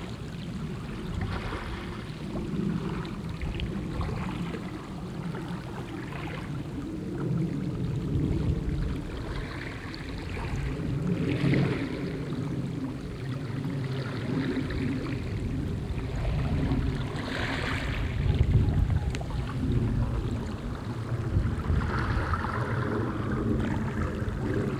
Sveio, Norwegen - Norway, Keksje, waves, in mild wind
At the stoney coast of the Bomlafjord on a mild windy summer morning. In the distance a plane crossing the sky.
international sound scapes - topographic field recordings and social ambiences